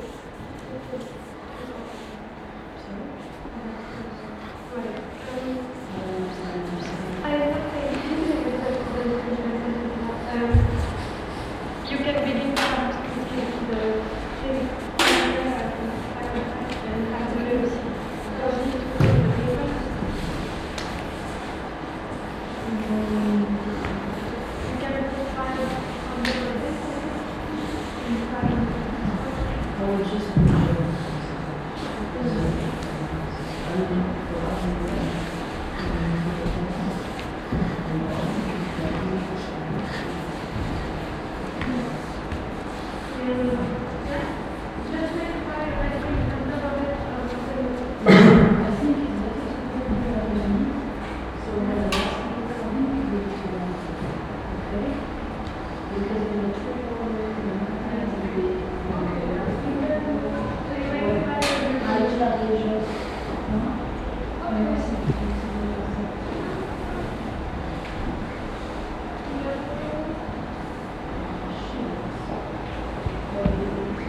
Central Area, Cluj-Napoca, Rumänien - Cluj, hungarian theatre, studio
Inside the studio hall of the hungarian theatre. The sounds of silent conversations and warm upmovements of a dancer on stage.
international city scapes - topographic field recordings and social ambiences
Cluj-Napoca, Romania